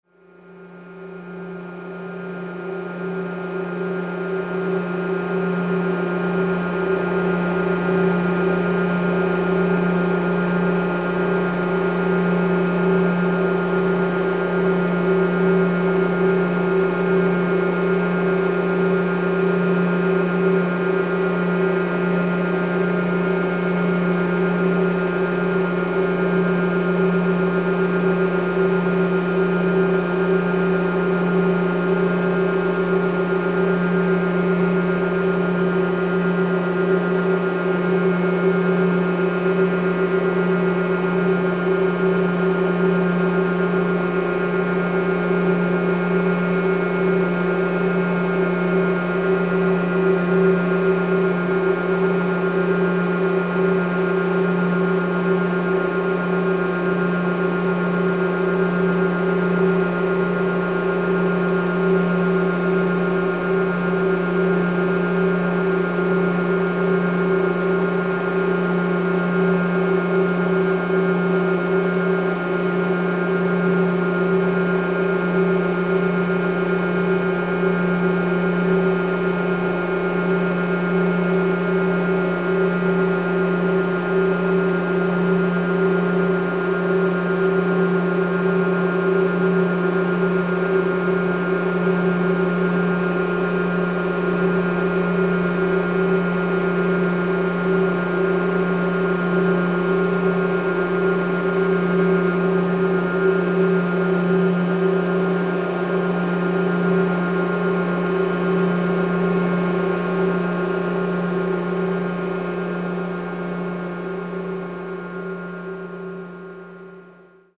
This is the biggest dump of Belgium. A big pump is extracting gas from the garbages. The recorder is placed into the pump snail form.